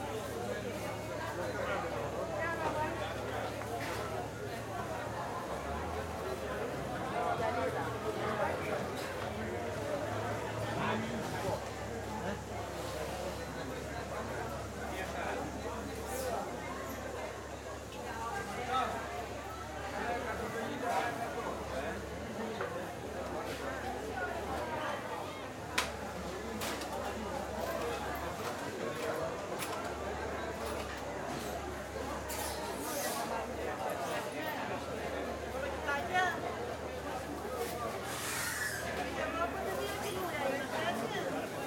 Spartis, Kalamata, Grèce - Sound travelling inside the market